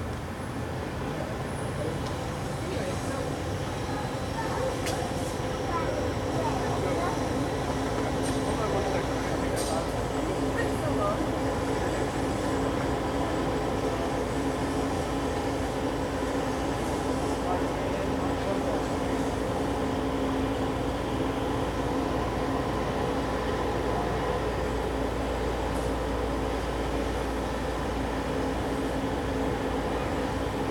Montreal: ave de l`Esplanade (4600 block) - ave de l`Esplanade (4600 block)
equipment used: Sony Minidisc, Sony stereo mic
Apologies for the wind noise... Still it is an insteresting soundmark.